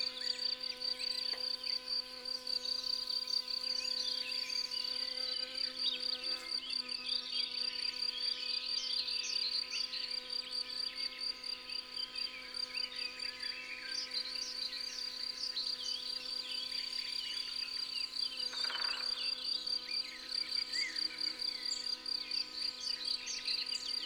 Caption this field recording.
Lac de Morgnieu, montage sonore, Tascam DAP-1 Micro Télingua, Samplitude 5.1